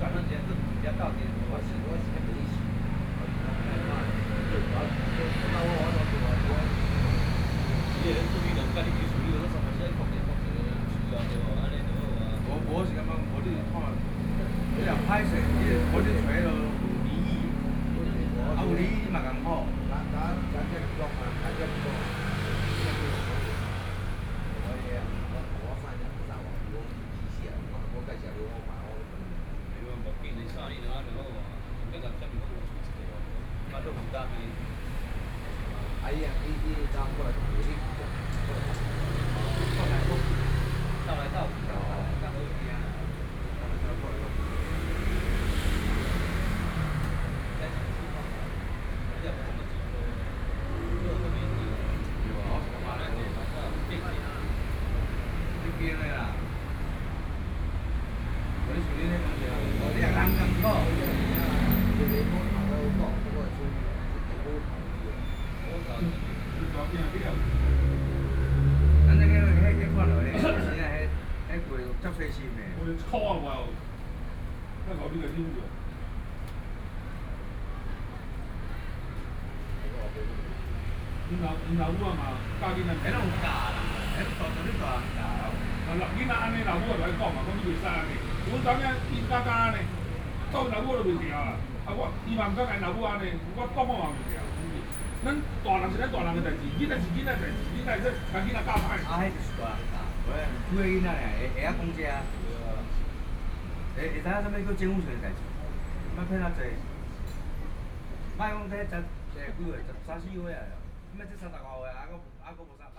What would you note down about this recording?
Coffee shop on the roadside, Traffic Sound, Tourist, Sony PCM D50+ Soundman OKM II